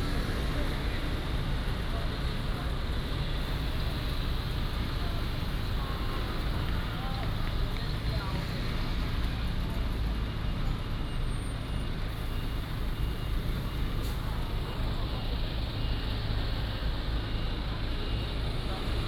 Zhongshan Rd., Donggang Township - Next to the bus stop
Next to the bus stop